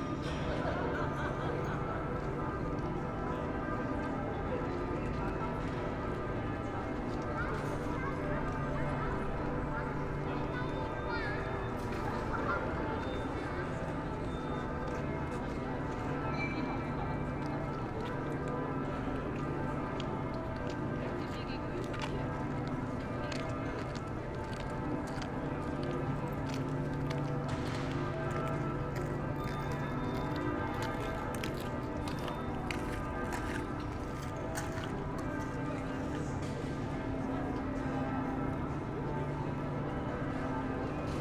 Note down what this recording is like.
soundscape within the inner yard of the new Berliner Stadtschloss, city castle. Churchbells of the cathedral opposite, sounds of the restaurant, among others, various reflections, (Sony PCM D50, Primo EM272)